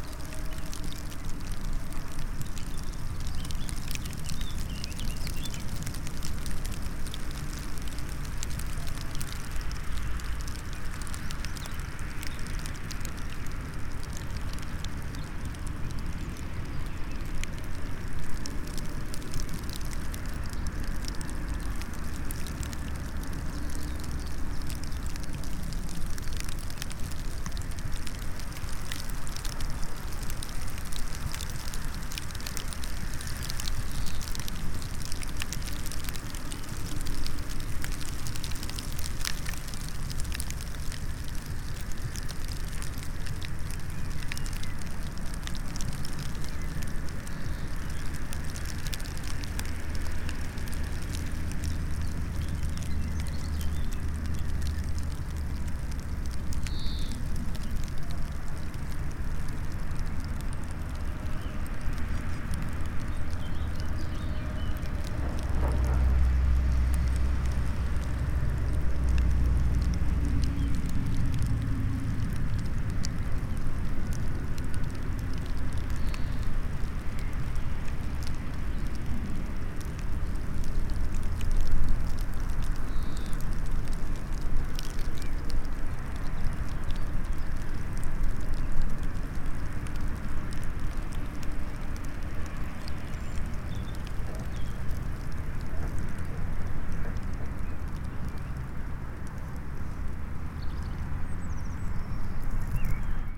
Weimar, Deutschland - nordPunkt
SeaM (Studio fuer elektroakustische Musik) - klangOrte - nordPunkt